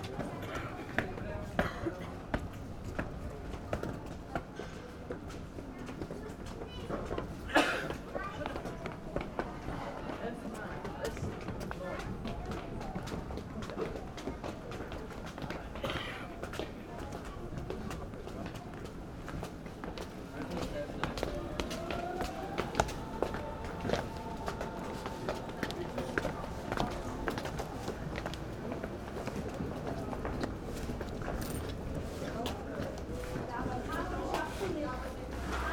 Berlin Ostkreuz - station ambience, steps on stairs
Berlin Ostkreuz, traffic cross, steps on stairs, station ambience